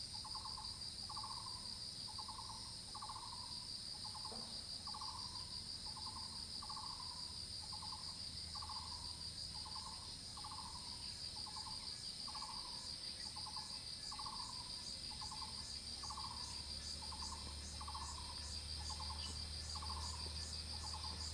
Zhonggua Rd., Puli Township 桃米里 - Insect and Bird sounds
Insect sounds, Bird sounds, Traffic Sound
Zoom H2n MS+ XY
Nantou County, Taiwan